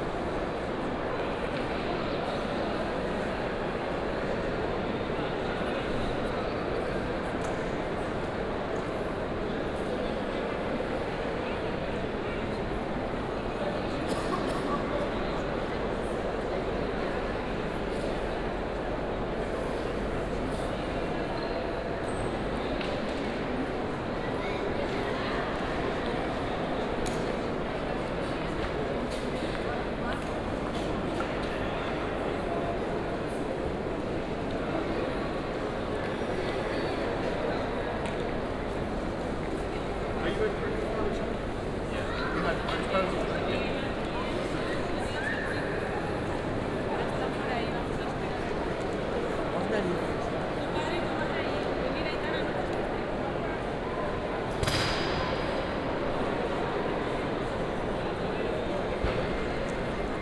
Airport Marrakesch-Menara - great hall ambience, ground floor
while recording the hum of this airport, i was wondering about the similarity of controled and regulated airport ambiences in general.
(Sony D50, OKM2)
1 March, 10:35